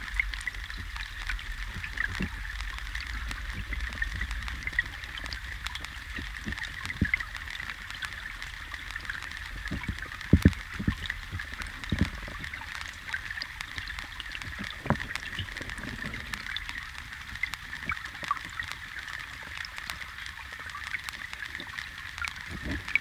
Vilnius, Lithuania, river Neris underwater
rain comes. we stand under the bridge and listen underwaters of river Neris. shits and trashs flows and hit my hydrophone...
Vilniaus apskritis, Lietuva, 28 September 2019